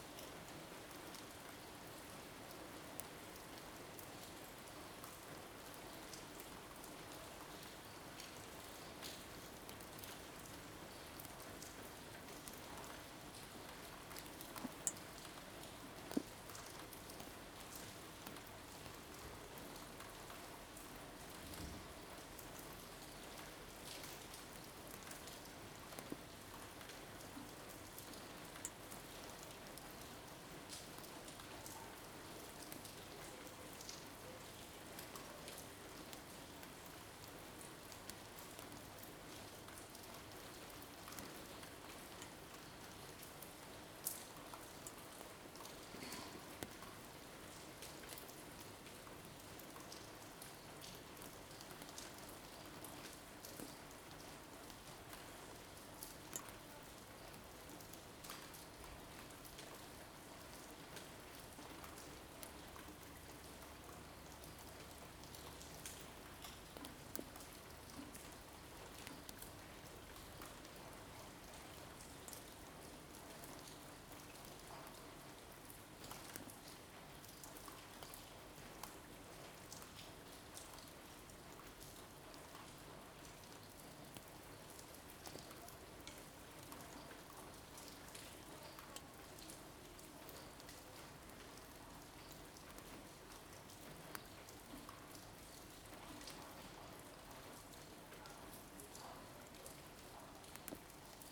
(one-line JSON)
{"title": "Carrer de Joan Blanques, Barcelona, España - Rain15042020BCNLockdown", "date": "2020-04-15 23:00:00", "description": "Recorded from a window in Barcelona during the COVID-19 lockdown. Raw field recording of rain and some street noises, such as cars and birds. Made using a Zoom H2.", "latitude": "41.40", "longitude": "2.16", "altitude": "65", "timezone": "Europe/Madrid"}